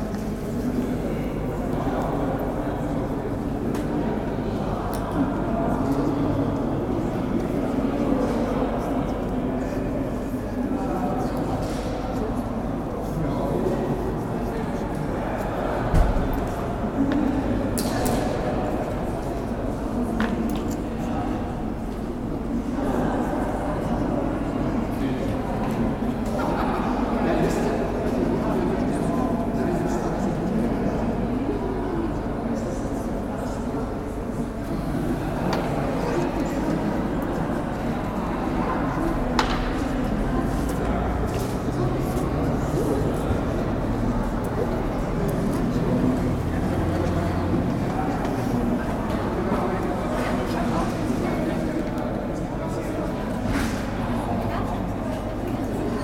Cologne, Rautenstrauch-Joest-Museum - Entrance hall
Ambience in the foyer of the Rautenstrauch-Joest-Museum/ Cultures of the world.
During the break of a symposium groups of people stand around, talking. From the cafeteria the sound of removing dishes from the tables and preparing coffee.